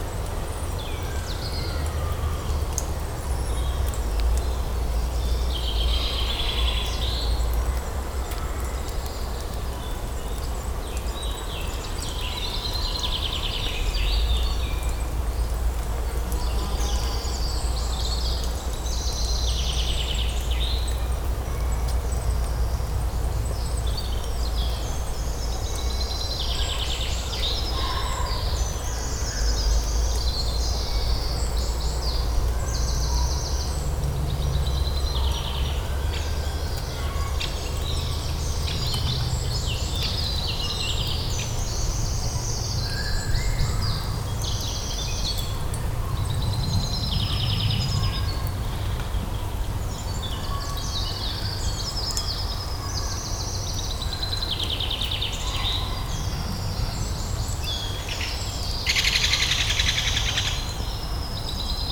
Court-St.-Étienne, Belgique - In the pines
Into the forest, wind sound in the pines needles and birds singing during spring time.